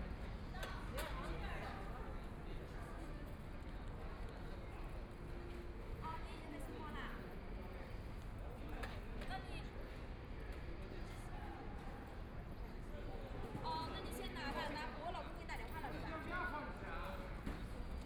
Pudong New Area, Shanghai - In the Atrium Plaza
In the Atrium Plaza, Discharge, The crowd, Electric cars, Binaural recording, Zoom H6+ Soundman OKM II